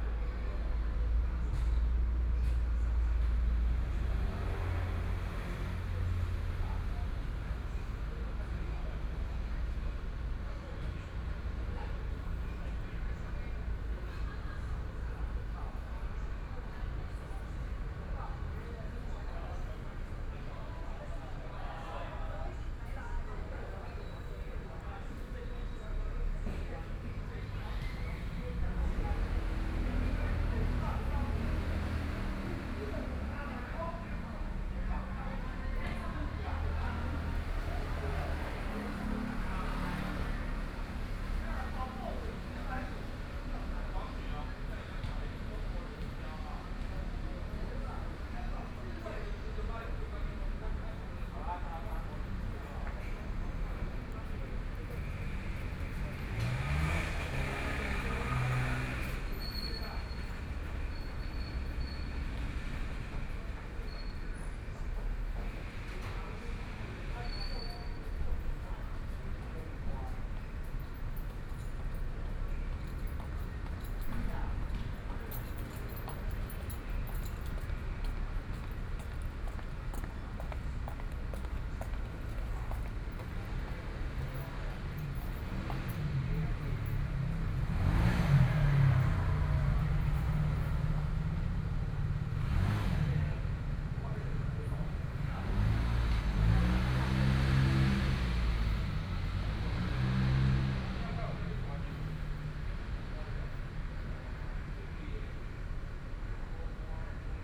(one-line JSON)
{"title": "XinXing Park, Taipei City - Night in the park", "date": "2014-02-28 18:47:00", "description": "Night in the park, Children, Traffic Sound, sit behind the small temple\nPlease turn up the volume a little\nBinaural recordings, Sony PCM D100 + Soundman OKM II", "latitude": "25.06", "longitude": "121.52", "timezone": "Asia/Taipei"}